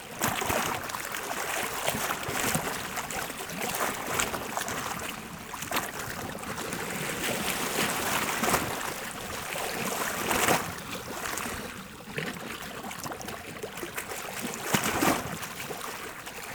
Hayravank, Arménie - Sevan lake
Sound of the Sevan lake, a beautiful blue and cold water lake, near the Hayravank monastery.
Hayravank, Armenia, September 2018